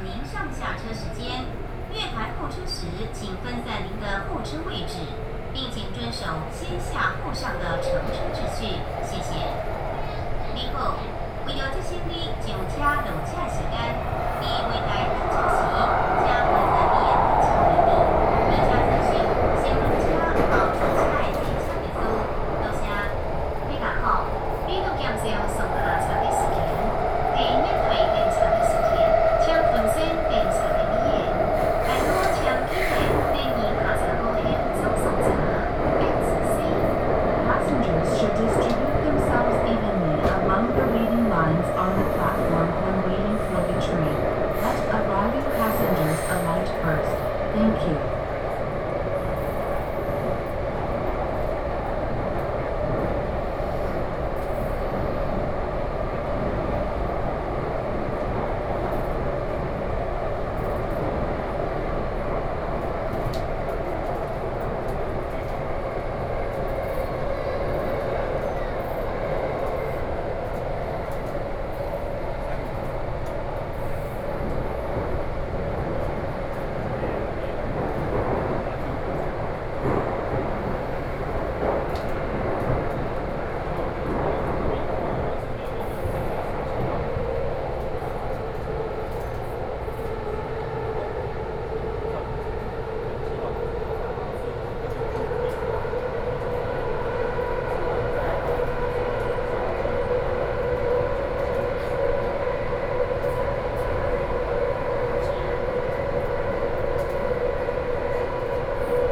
from Guting Station to Dingxi Station, Sony PCM D50 + Soundman OKM II
7 August 2013, 20:31